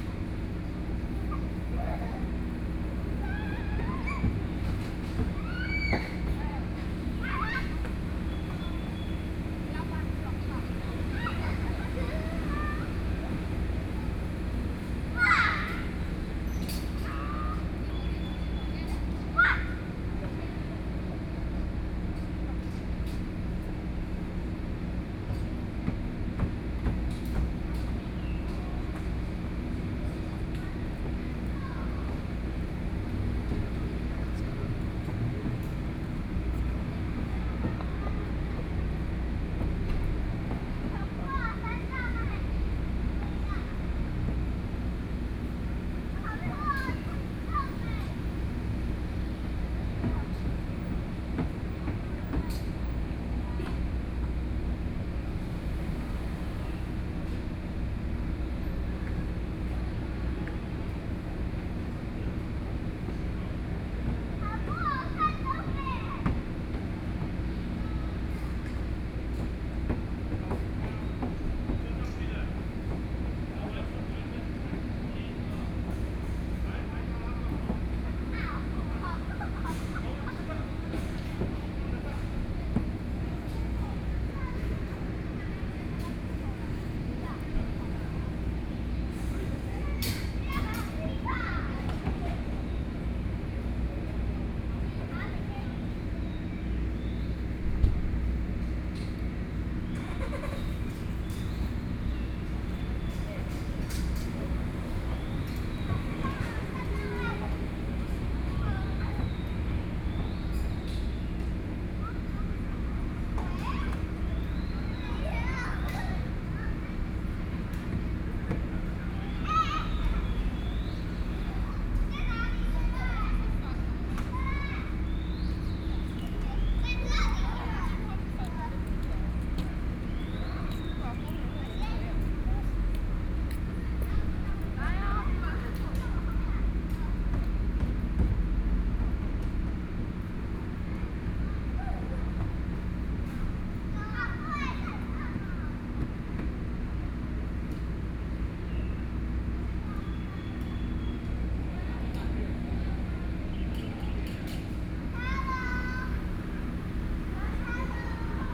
大安區民炤里, Taipei City - in the Park
Community-based small park, Children's play area
Sony PCM D50+ Soundman OKM II